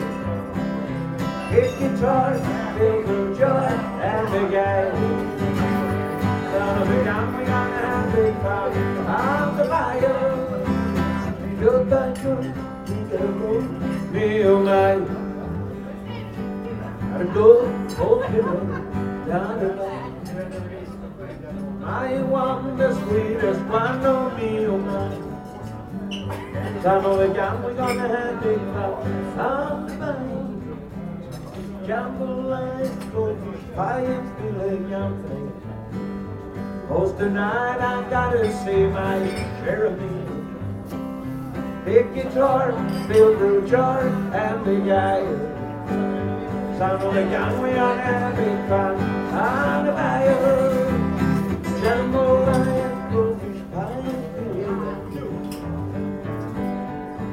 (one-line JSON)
{"title": "night ferry, solo entertainment aboard", "description": "recorded on night ferry trelleborg - travemuende, august 10 to 11, 2008.", "latitude": "55.18", "longitude": "13.04", "timezone": "GMT+1"}